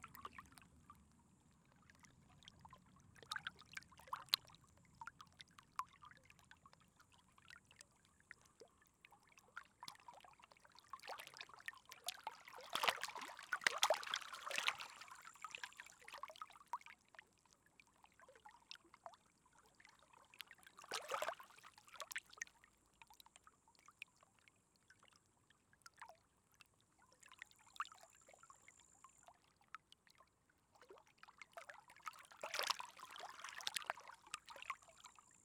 {"title": "lake Kertuoja, Lithuania, amongst the stones", "date": "2017-08-09 18:30:00", "latitude": "55.18", "longitude": "25.64", "altitude": "145", "timezone": "Europe/Vilnius"}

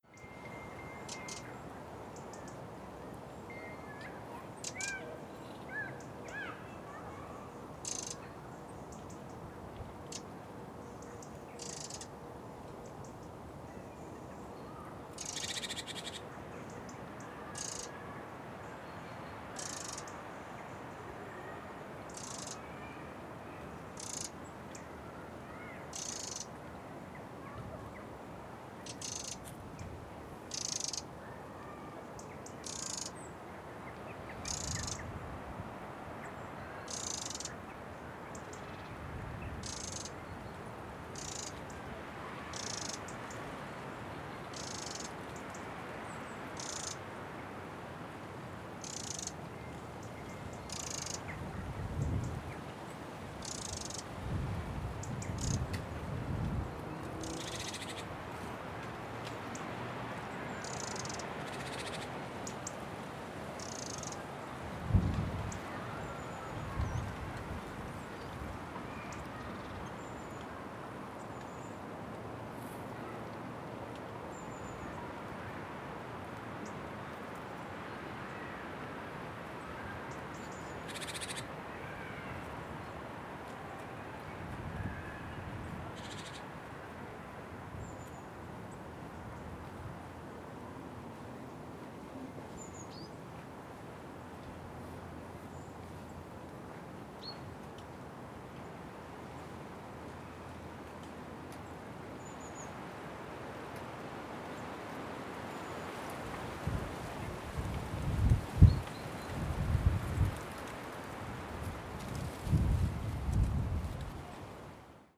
{"title": "Yorkshire Sculpture Park, West Bretton, UK - Yorkshire Sculpture Park bird chirps", "date": "2015-02-01 15:06:00", "description": "Bird chirps, wind, and children. Just before taking this recording I disturbed a sparrowhawk which had just caught a small bird and flew off over this hedge.\nRecorded on a zoom H4n, filtered with audacity's low-pass at 100Hz", "latitude": "53.61", "longitude": "-1.57", "altitude": "104", "timezone": "Europe/London"}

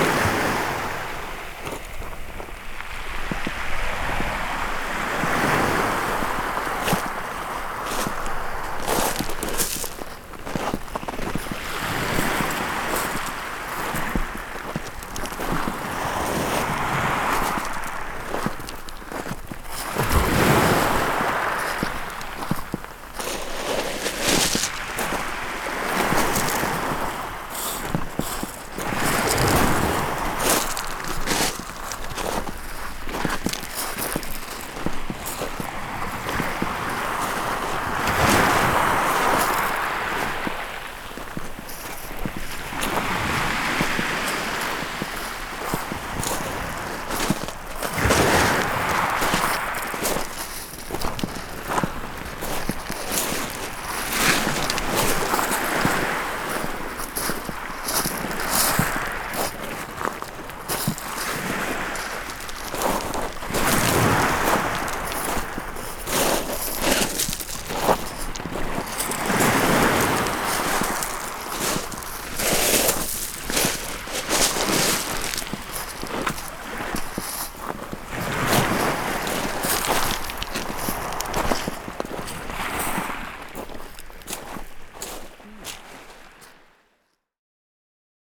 {"title": "Walking on Shingle, Thorpeness, Suffolk, UK - Shingle", "date": "2018-12-28 12:39:00", "description": "Walking on the shingle beach as close as I can to the water's edge. The two lavaliers are suspended by hand just above my feet to get the best sound.\nMixPre 3 with 2 x Beyer Lavaliers.", "latitude": "52.18", "longitude": "1.62", "altitude": "3", "timezone": "Europe/London"}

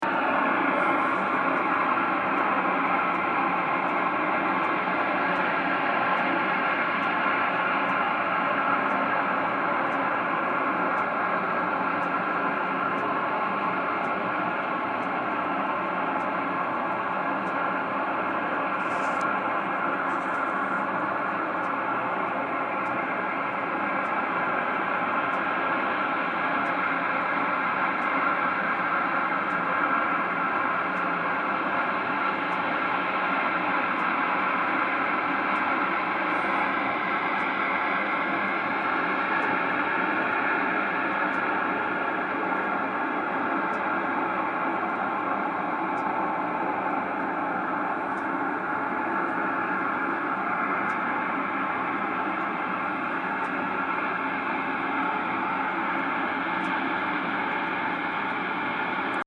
{"title": "Steam release near Viti, Iceland", "date": "2010-07-22 22:08:00", "description": "geothermal power plant near myvatn, iceland", "latitude": "65.72", "longitude": "-16.75", "altitude": "608", "timezone": "Iceland"}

